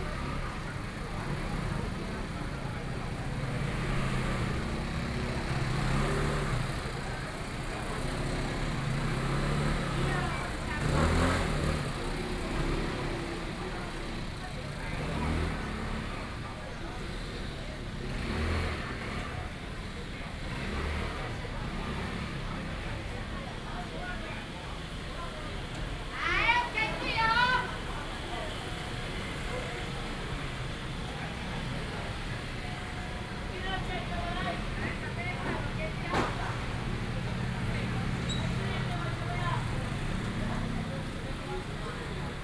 Selling chicken sounds, Standing in front of convenience stores, The traffic sounds, Binaural recordings, Zoom H6+ Soundman OKM II
New Taipei City, Taiwan, 17 November 2013